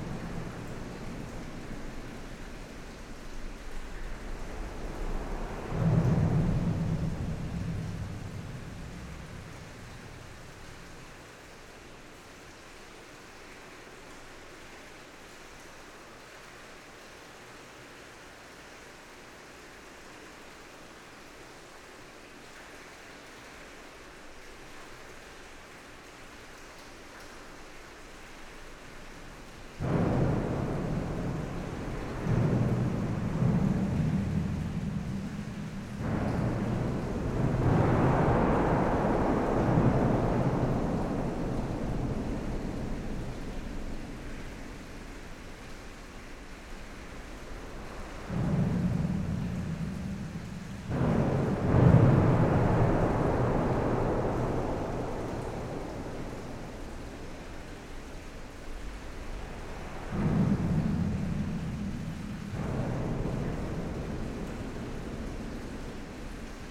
Dinant, Belgium - Charlemagne bridge
Recording of the Charlemagne bridge from the inside. Reverb is very huge because of the long metallic caisson, where I walk. A bridge is not filled with concrete, its entierely empty.